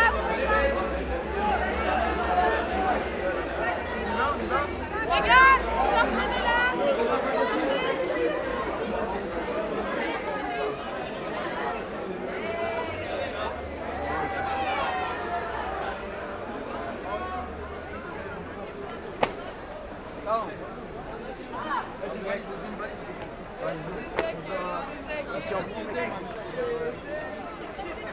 Ottignies-Louvain-la-Neuve, Belgium - 24 heures vélos - 24 hours Bicycles
24 heures vélos means, in french, 24 hours bicycles. Students are doing a big race, with traditional VTT running fast, folk and completely crazy bikes, running slow and bad and drunk, all running during 24 hours. Every whistle notice a bike incoming. Also, its a gigantic carousal. Every student is drunk. On evening it's happy people, shouting, pissing everywhere and vomiting also everywhere. Later on the night, more and more alcohol, it will be another story... But also this feast, it's bleusailles. It's a patois word meaning ... perhaps trial by fire, its hard to translate as it's a quite special belgian folk, with clothes and rules. 9:30 mn, it's baptized students walking, coming from Hermes school, shouting and ... singing ? I think they are completely drunk ! Recording while walking in the center of the city. It's all night shouting like this !
25 October 2017, 20:50